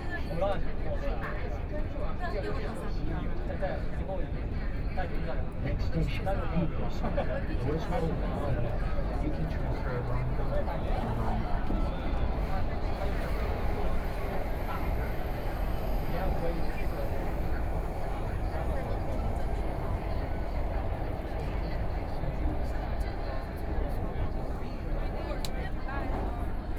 Jing'an District, Shanghai - Line 2(Shanghai Metro)
from Jing'an Temple Station to People's Square Station, Binaural recording, Zoom H6+ Soundman OKM II
Shanghai, China, 23 November 2013